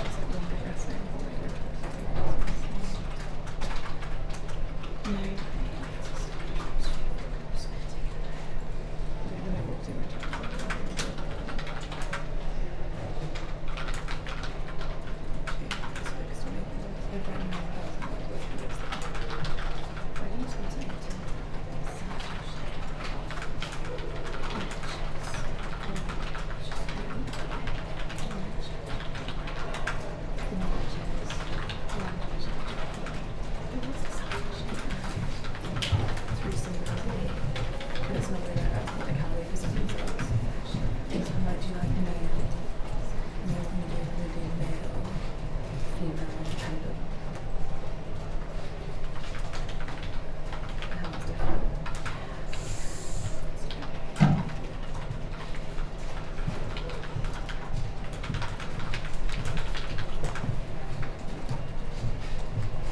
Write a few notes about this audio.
Short 10-minute meditation in the study area of Brookes library. (Spaced pair of Sennheiser 8020s with SD MixPre6)